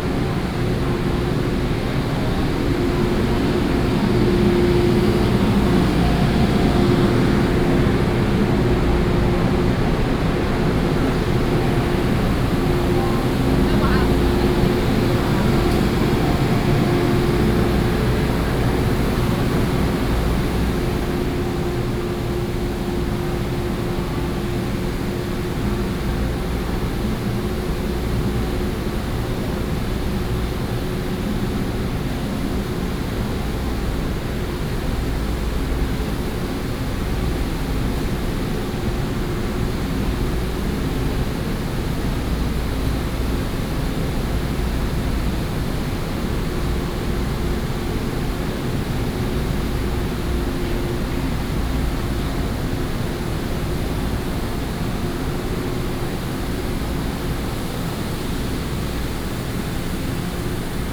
Taoyuan Station, Taoyuan City 桃園區 - At the train station platform
At the train station platform, The train arrives, Into the train compartment, next to the air conditioning noise
12 October, ~18:00